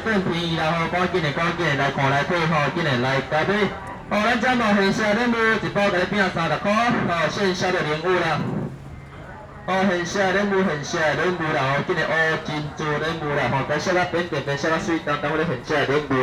Changle Rd., Luzhou Dist., New Taipei City - Fruit Shop
Fruit Shop
Rode NT4+Zoom H4n
New Taipei City, Taiwan, 11 January, 14:28